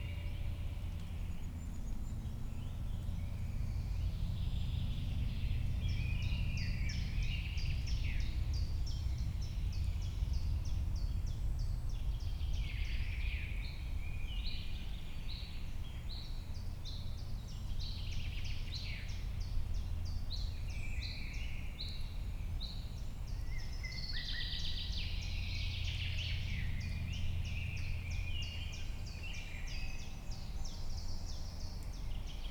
Boowald - sound atmo on a normal day in spring
This the sound atmosphere during a normal day in spring: Birds, airliners cracking sounds in the forest.
Glashütten, Switzerland